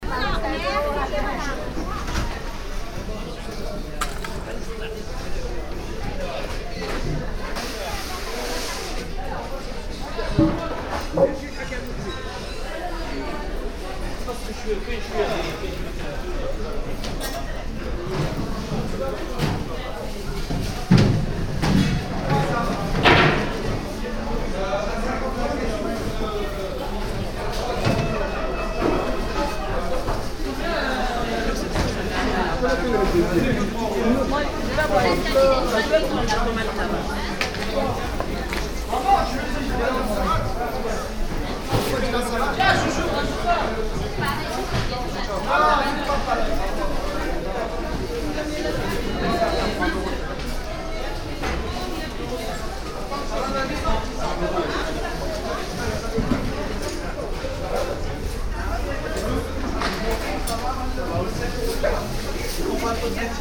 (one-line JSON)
{"title": "paris, noisiel, indoor food market", "description": "indoor food market in the evening\ninternational city scapes - social ambiences and topographic field recordings", "latitude": "48.84", "longitude": "2.61", "altitude": "96", "timezone": "Europe/Berlin"}